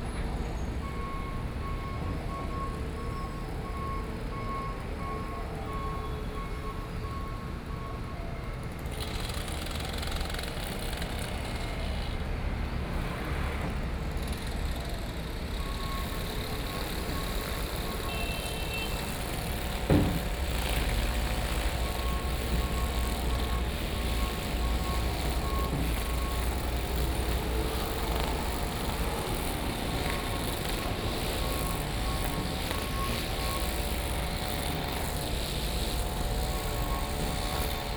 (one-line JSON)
{"title": "鼓山區山下里, Kaohsiung City - Construction noise", "date": "2014-05-16 09:58:00", "description": "Birdsong, Construction noise, Mower, Traffic Sound", "latitude": "22.63", "longitude": "120.28", "altitude": "9", "timezone": "Asia/Taipei"}